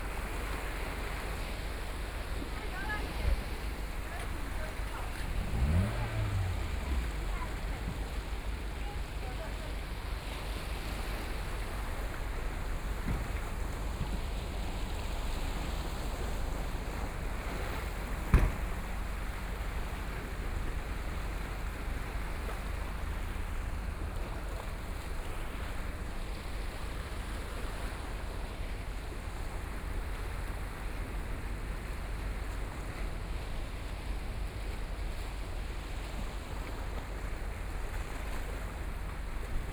Night on the coast, Traffic Sound, Sound of the waves
New Taipei City, Taiwan, 29 July 2014, 19:19